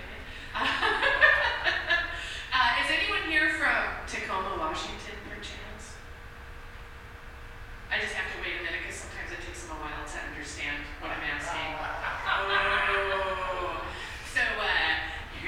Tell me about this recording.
Inside a restored 1890s saloon, a group of about 25 people listen to the introduction to "Bill Speidel's Underground Tour." Patti A. is the tour guide. Stereo mic (Audio-Technica, AT-822), recorded via Sony MD (MZ-NF810).